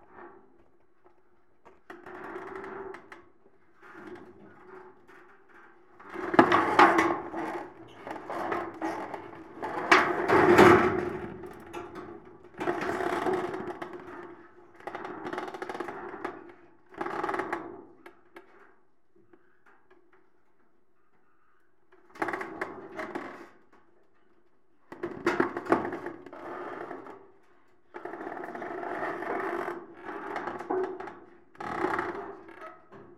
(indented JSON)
{
  "title": "Yves Brunaud, Toulouse, France - metalic vibration 04",
  "date": "2022-04-12 11:00:00",
  "description": "metal palisade moving by the action of the wind\nZOOM H4n",
  "latitude": "43.62",
  "longitude": "1.47",
  "altitude": "165",
  "timezone": "Europe/Paris"
}